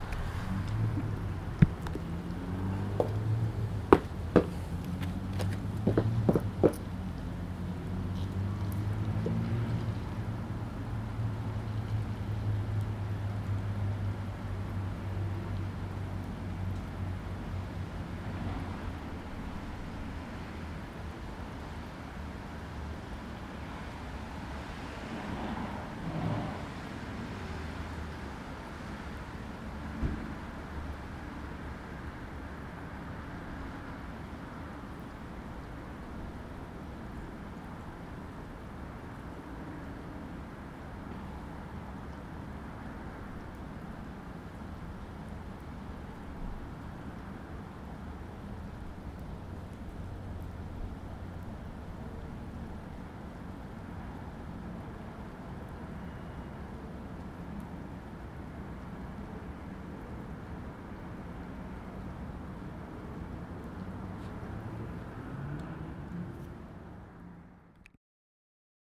Muhlenberg College Hillel, West Chew Street, Allentown, PA, USA - Muhlenberg College Campus Security Office (Outside)
Recorded right outside the Campus Security Office at Muhlenberg college.